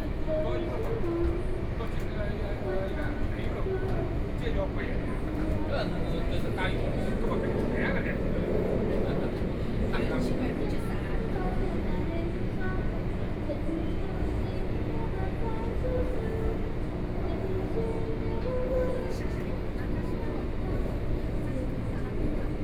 East Nanjing Road Station, Shanghai - Line 10 (Shanghai Metro)
Arrival voice inside in front of the station, Walking inside the station, Binaural recordings, Zoom H6+ Soundman OKM II
Huangpu, Shanghai, China, 1 December 2013